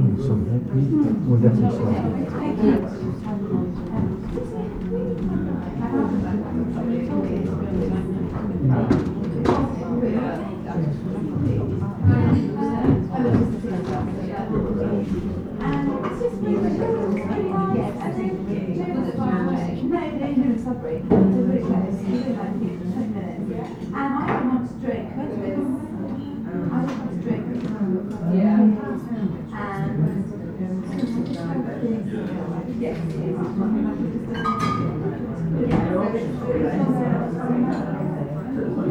Hotel Restaurant, Aldeburgh, UK
Voices and random ambient sounds in a nice hotel restaurant during a busy lunchtime. Rather muffled sound due to my recorder and rucksack being laid on the floor by the window which seems to have emphasised the low frequencies. I applied a little low cut to help but not very successfully.
MixPre 6 II and two Sennheiser MKH 8020s
England, United Kingdom, 7 February